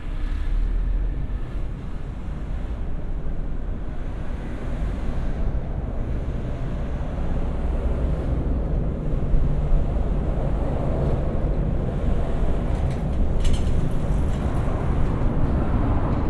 {"title": "Montreal: Charlevoix Bridge (under) - Charlevoix Bridge (under)", "date": "2009-05-04 18:05:00", "description": "equipment used: Olympus LS-10 & OKM Binaurals\nStanding underneath the Charlevoix Bridge, there is an interesting mixture of traffic above, passing bikes/inline skates/runners, and birds.", "latitude": "45.48", "longitude": "-73.57", "altitude": "12", "timezone": "America/Montreal"}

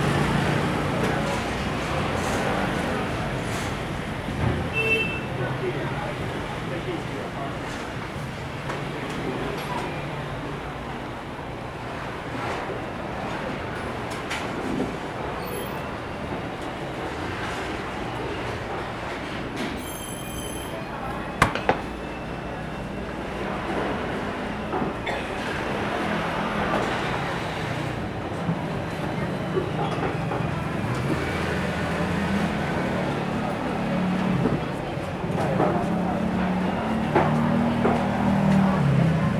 {"title": "Ln., Sec., Lixing Rd., Sanchong Dist., New Taipei City - Morning market", "date": "2012-03-08 05:30:00", "description": "In the Market, Chicken sounds\nSony Hi-MD MZ-RH1 +Sony ECM-MS907", "latitude": "25.07", "longitude": "121.49", "altitude": "12", "timezone": "Asia/Taipei"}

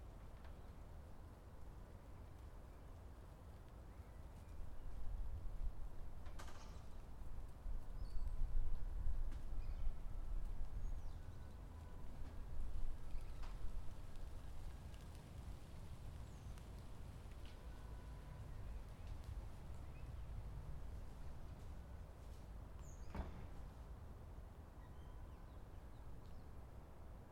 {"title": "Thérèse Schwartzeplein, Amsterdam, Nederland - Thérèse Schwartzeplein", "date": "2013-11-01 22:00:00", "description": "Het Therese Schwarzplein heeft heel bijzondere akoestische eigenschappen. Geluiden vanuit de directe omgeving worden geblockt door de gevels die het plein bijna helemaal omsluiten. Het plein is daardoor in feite een gigantisch oor dat luistert naar de verre geluiden van de stad. We horen in deze opname geruis van de ring, kerkklokken, politiesirenes etc.", "latitude": "52.35", "longitude": "4.90", "altitude": "3", "timezone": "Europe/Amsterdam"}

